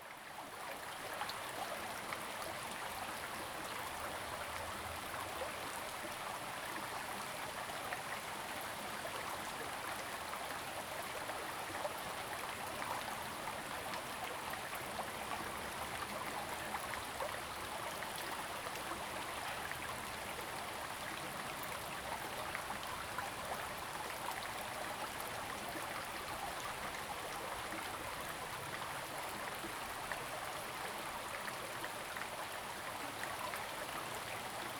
種瓜坑溪, 成功里 Puli Township - Stream sound
Stream
Zoom H2n MS+XY